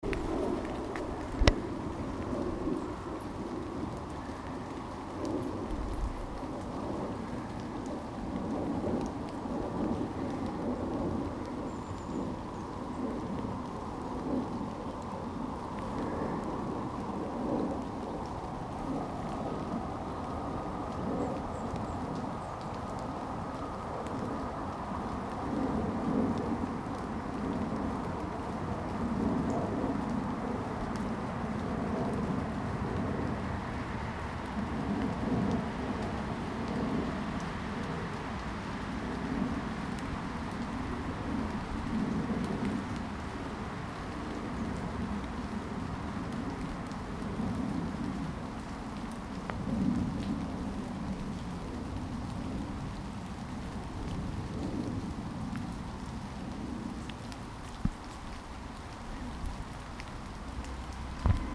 Warwick St, Newcastle upon Tyne, UK - City Stadium, Heaton/Shieldfield
Walking Festival of Sound
13 October 2019
Rain and sound of aeroplane overhead
North East England, England, United Kingdom